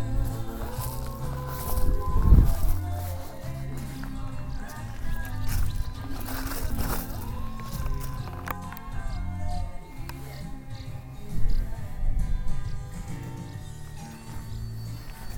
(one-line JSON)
{"title": "kramnitse, western camp", "date": "2010-09-10 11:12:00", "description": "at the western camp, walking inside the open air saloon and bar barn area - western music, foot steps on stoney ground and some wind pops\ninternational sound scapes - social ambiences and topograpgic field recordings", "latitude": "54.71", "longitude": "11.26", "altitude": "1", "timezone": "Europe/Copenhagen"}